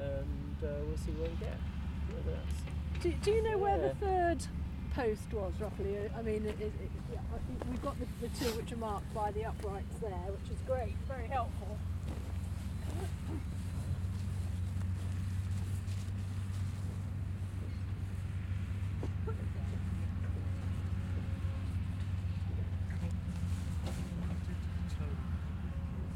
Larkhill, Durrington, UK - 026 Archaeologists discussing solsticial alignments
Salisbury, UK, 26 January 2017, 11:42